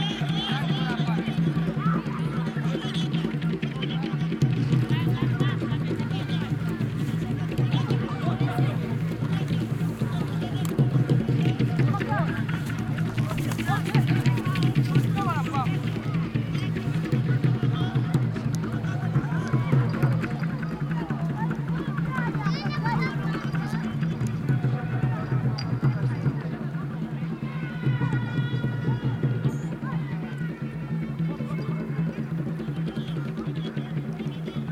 Old Independence Stadium, Matero, Lusaka, Zambia - Zambia Popular Theatre Alliance in action…
Imagine 260 young people in intense movement in the empty stadium, drummers, contemporary and traditional dancers, acrobats, magicians…. You are listening to a bin-aural soundscape-recording of the Zambia Popular Theatre Alliance (ZAPOTA) rehearing for the opening of the Zone 6 Youth Sports Games…
The complete playlist of ZAPOTA rehearsing is archived here:
26 November